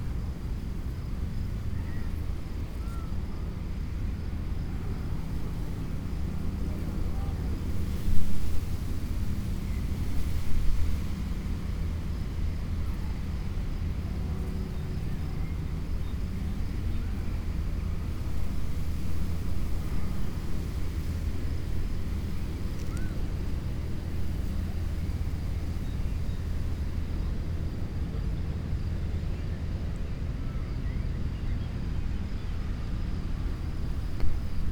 path of seasons, vineyard, piramida - lying in the grass
flowing grass, city traffic from distance
Maribor, Slovenia, 22 May 2014, 16:33